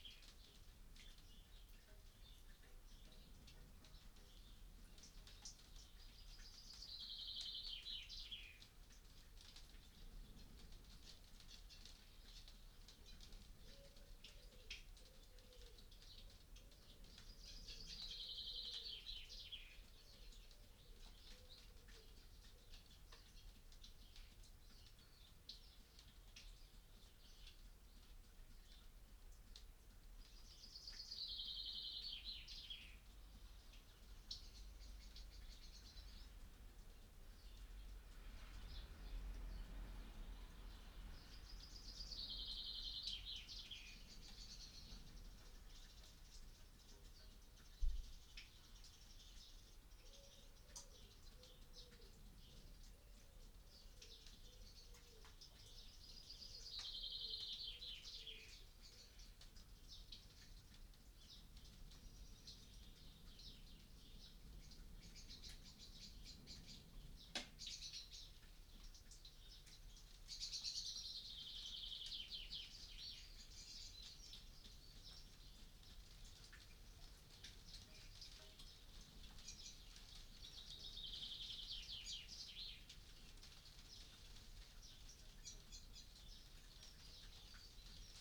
Luttons, UK - hail on a greenhouse ...
Passing hail showers on a greenhouse ... recorded inside with a dummy head ... bird song and passing traffic ...